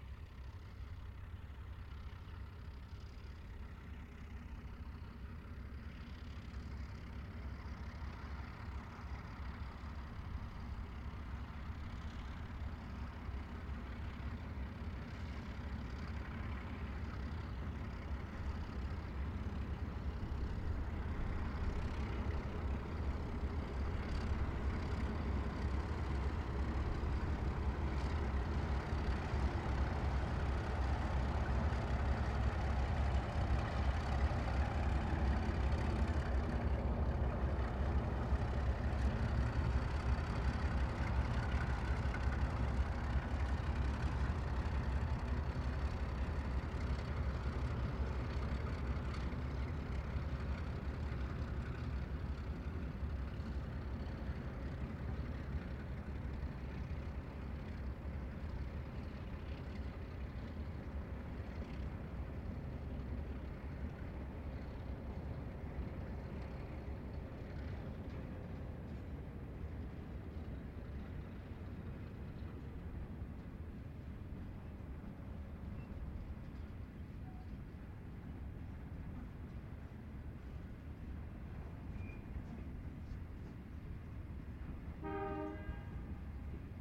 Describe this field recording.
11.01.2014 - Arriving at Cootamundra from Stockinbingal are a pair of 48 class Graincorp engines 48204,48218 on a loaded wheat/grain train.